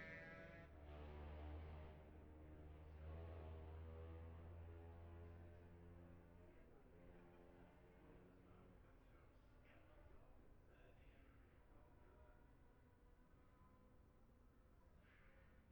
Jacksons Ln, Scarborough, UK - olivers mount road racing 2021 ...
bob smith spring cup ... ultra-lightweights practice ... luhd pm-01 mics to zoom h5 ...